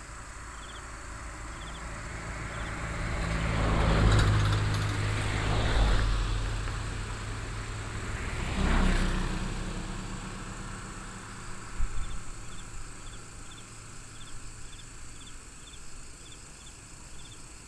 東龍街, Daxi Dist., Taoyuan City - Insects sound
Insects, traffic sound, Binaural recordings, Sony PCM D100+ Soundman OKM II
19 September 2017, 20:30